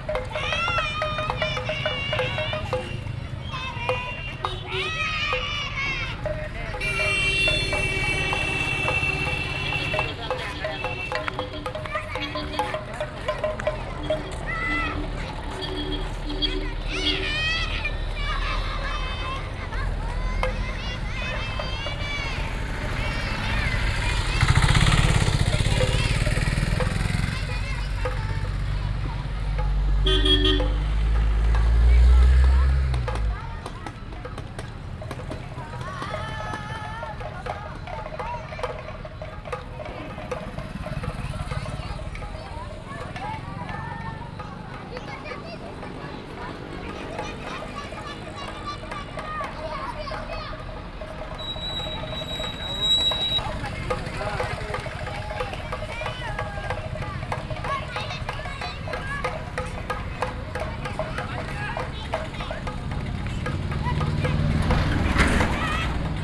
Saundatti, Crossroads, Children and percussions
India, Karnataka, Saundatti, crossroads, horns, children, percussions